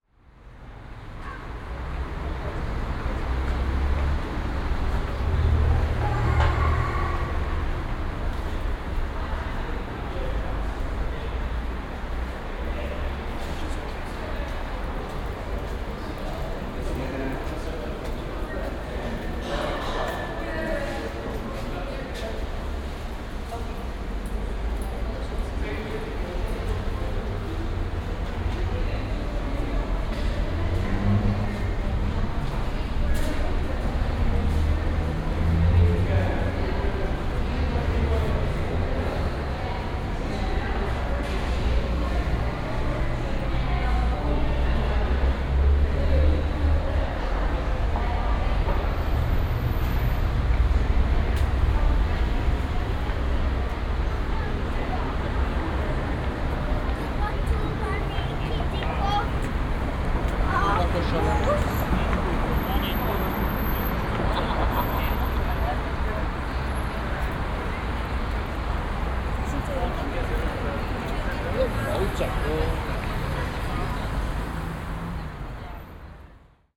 Binaural recording of Astoria metro station
recorded with Soundman OKM + Sony D100
sound posted by Katarzyna Trzeciak
11 June 2017, ~14:00